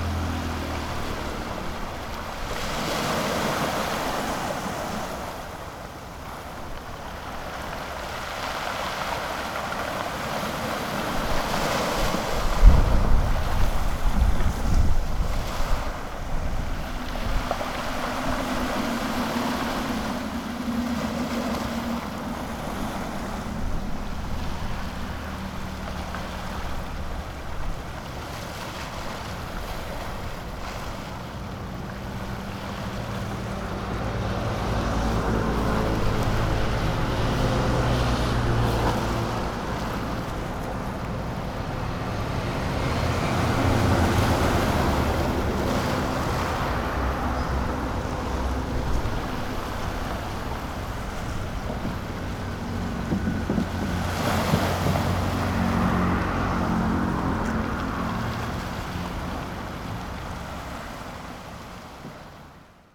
Zhongzheng Rd., Tamsui Dist. - Waves and Traffic Sound
Wind, Waves, Traffic Sound
Sony PCM D50